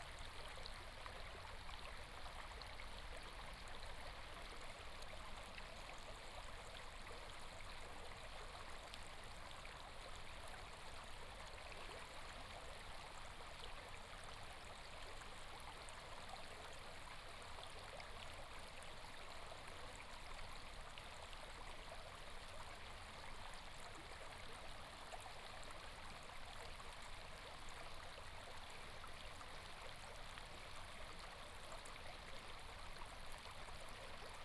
Stream sound
Binaural recordings
Sony PCM D100+ Soundman OKM II
Taimali Township, 東64鄉道, 1 April